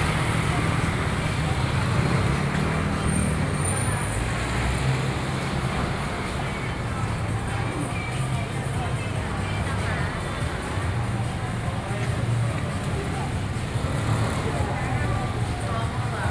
Ziyou Rd., Banqiao Dist., New Taipei City - Traditional markets